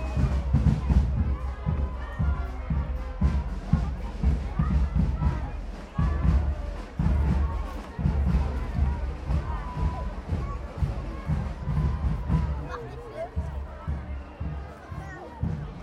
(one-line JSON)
{"title": "Hoogstraat, Abcoude, Netherlands - Kingsday in Abcoude", "date": "2018-04-30 12:16:00", "description": "Moving audio (Binaural) on a flea market at Kingsday in the Netherlands.", "latitude": "52.27", "longitude": "4.97", "altitude": "6", "timezone": "Europe/Amsterdam"}